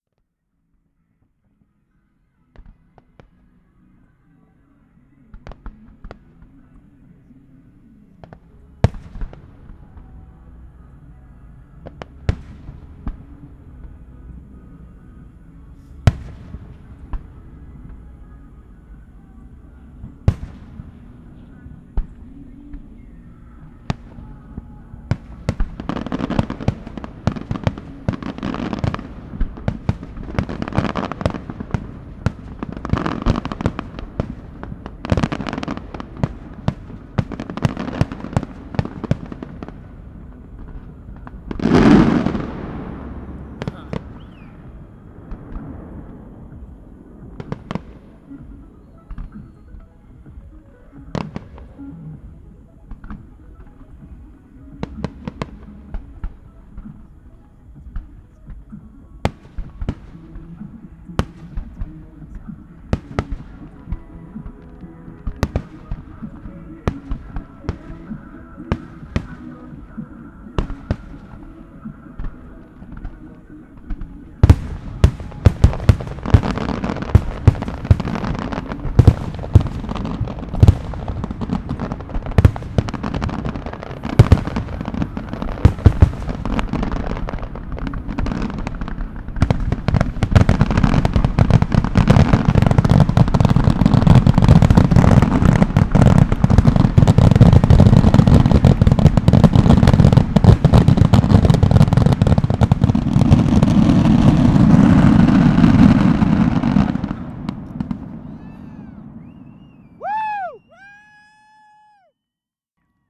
Fireworks: July 4th 2012, Eleanor Tinsley Park, Houston Texas - Fireworks: July 4th 2012 @ Eleanor Tinsley
Excerpt from Houston's Fourth of July spectacle. Recorded from a very sparsely populated vantage point. Bombs bursting in air, etc. Pretty much just us hooting and hollering, helicopters, distant soundsystem blaring top 40 tunez.
Church Audio CA-14 omnis mounted to glasses > Tascam DR100 MK-2
TX, USA, 4 July 2012